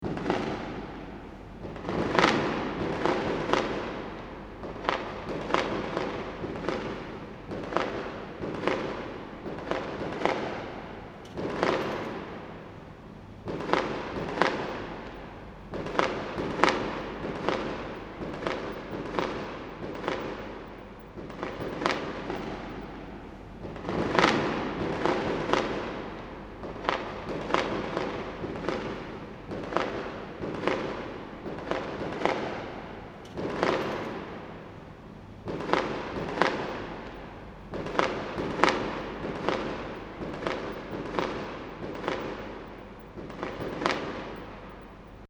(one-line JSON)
{"title": "Hotel Caruso, Prague, Czech Republic - fireworks", "date": "2011-06-21 21:50:00", "description": "Unexpected distant fireworks, recorded echoing around a large courtyard", "latitude": "50.09", "longitude": "14.42", "altitude": "200", "timezone": "Europe/Prague"}